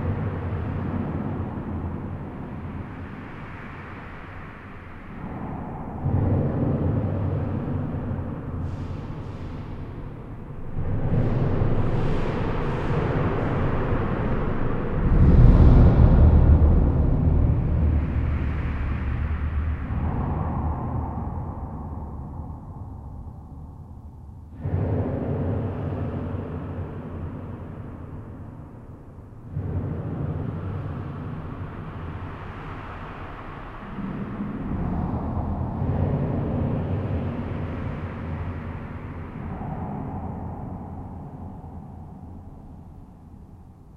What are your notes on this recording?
Recording of the technical tunnel of the Polleur bridge : I'm not on the motorway but below, not on the bridge but inside. Reverb makes very noisy and unpleasant low-pitched explosions. It's a foretaste of hell, in particular with trucks shelling. 8:35 mn, will we survive to the truck ? This bridge is entirely made of steel and it's just about my favorite places. Let's go to die now, bombing raid hang over.